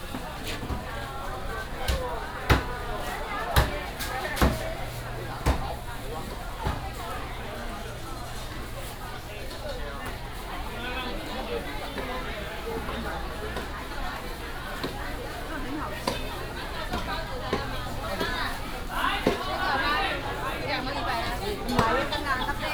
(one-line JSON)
{"title": "竹東中央市場, Zhudong Township - The sound of vendors", "date": "2017-01-17 11:18:00", "description": "Walking in the indoor and outdoor markets", "latitude": "24.74", "longitude": "121.09", "altitude": "123", "timezone": "GMT+1"}